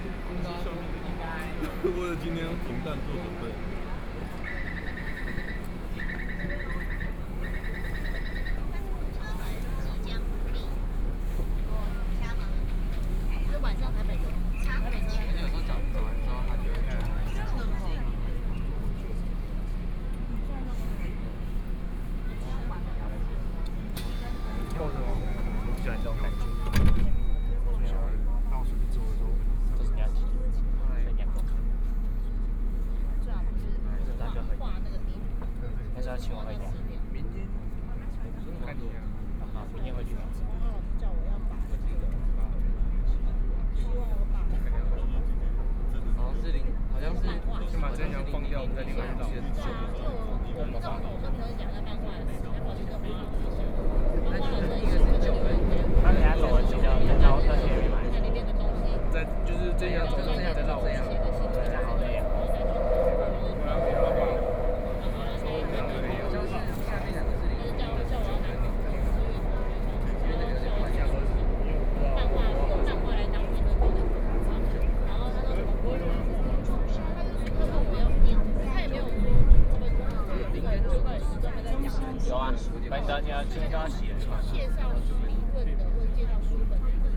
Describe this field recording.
Walking into the station, Binaural recordings